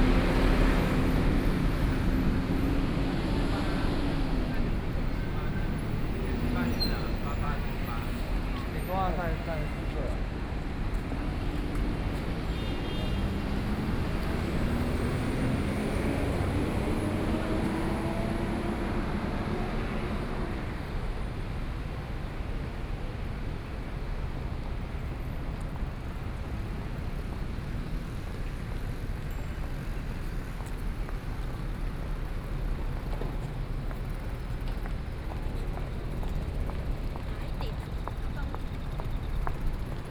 Walking on the road, Follow the footsteps, Traffic Sound, Various shops voices
Taipei City, Taiwan, 11 April 2014